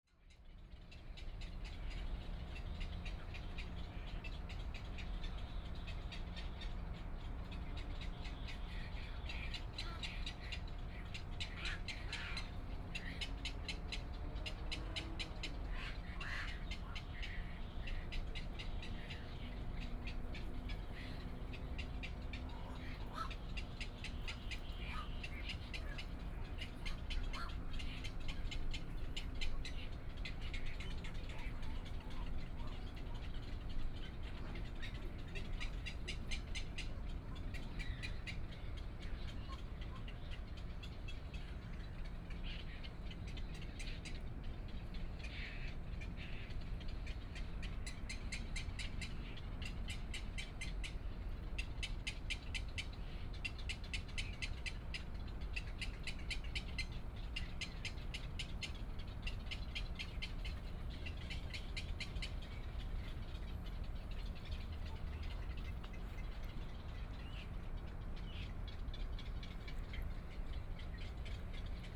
羅東林業文化園區, Luodong Township - Birdsong
Beside railroad tracks, Birdsong sound
Yilan County, Taiwan, 28 July 2014, 09:38